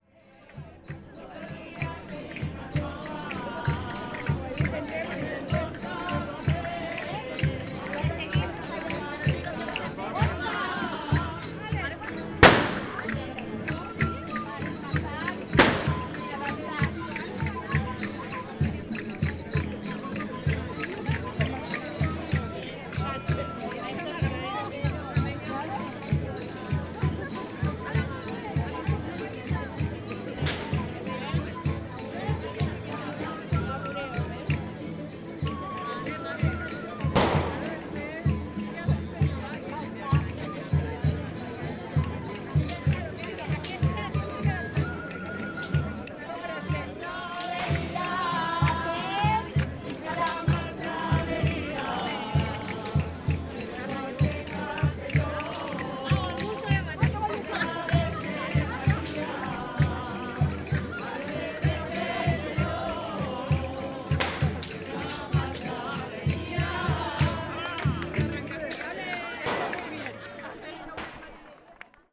Fragment del Bureo organitzat pel bar l'Ovella Negra de Castelló de la Plana durant les Festes de la Magdalena 2022. La peça interpretada són les Marineries de Castelló.
Castelló / Castellón, Comunitat Valenciana, España, 22 March 2022